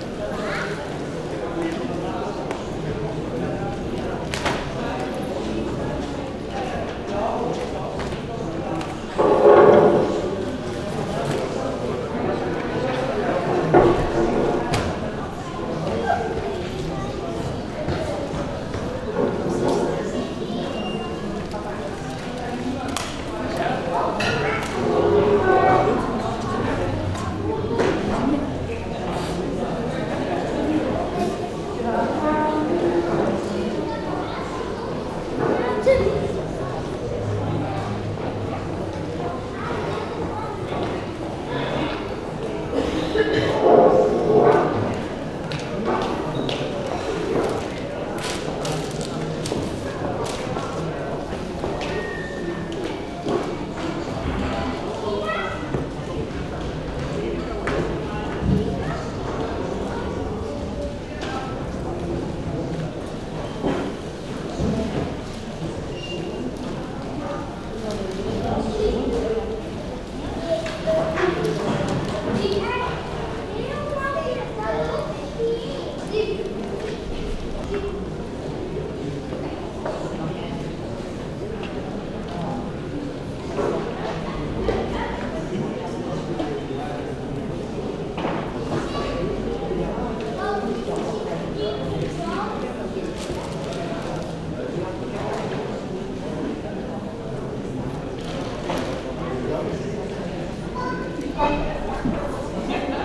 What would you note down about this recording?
The great and beautiful Utopia library. It is intended as a meeting place, so silence is not required.